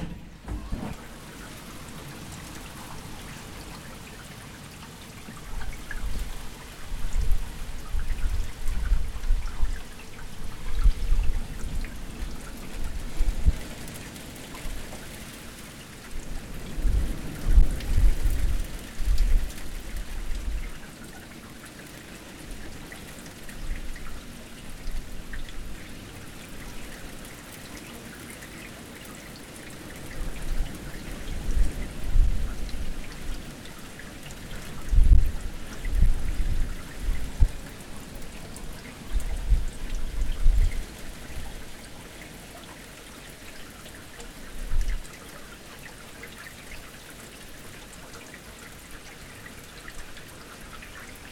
Selva, Puschlav, piove piove
auch im Süden regnet es Bindfäden, Selva auf der Terrasse